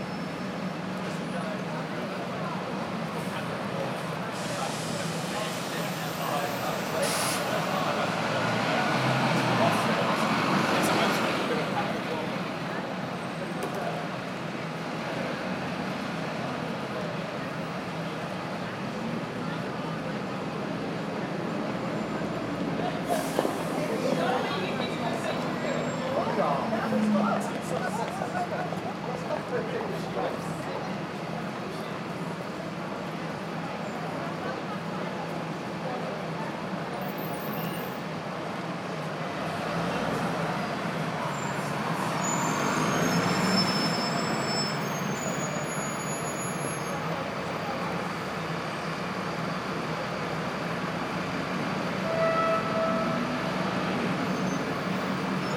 This is a recording made at the Manchester Piccadilly bus station. It was a chilling afternoon, yet, very busy as usual.